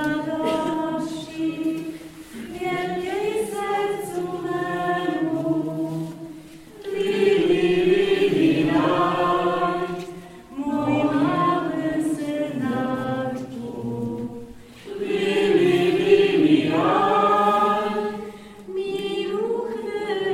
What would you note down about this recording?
This church was built upon a cave in which they say Jesus was fed with milk during the first weeks of his life. Today hundreds of religious pelgrims are visiting this place; some of them singing, chanting and praying ritual songs. While I was recording the ambiance, a group of polish tourists came in. (Recorded with Zoom4HN)